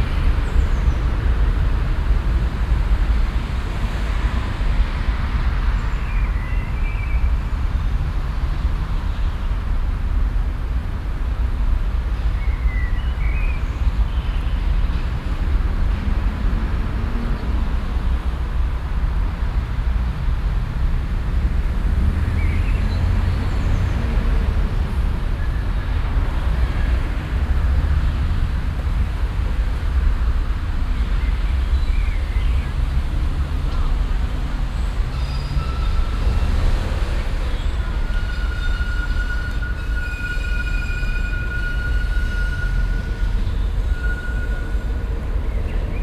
cologne, ubiering, strassenbahnwendehammer
soundmap: cologne/ nrw
wendehammer der strassenbahnen, verkehr der rheinuferstrasse, passanten
project: social ambiences/ listen to the people - in & outdoor nearfield recordings